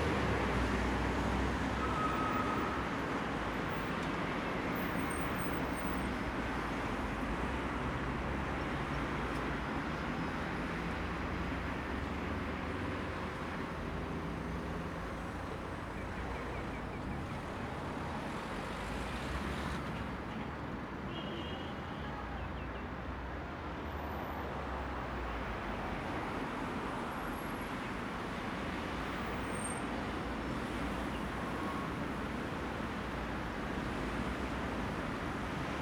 Kiryat Ono, Israel - Street, after noon, traffic